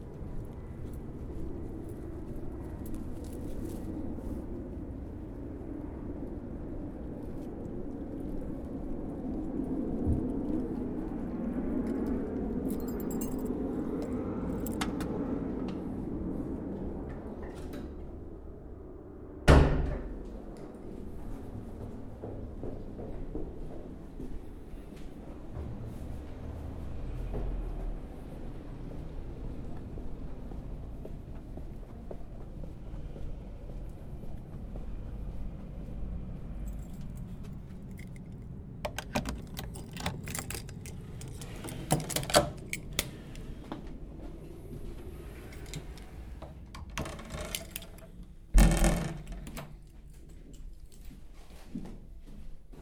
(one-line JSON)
{"title": "London Borough of Lambeth, Greater London, UK - Get a beer", "date": "2012-12-14 21:26:00", "description": "I went to get a beer from the off licence in front of my flat. Decided to record it. H4n Recorder.", "latitude": "51.45", "longitude": "-0.12", "altitude": "45", "timezone": "Europe/London"}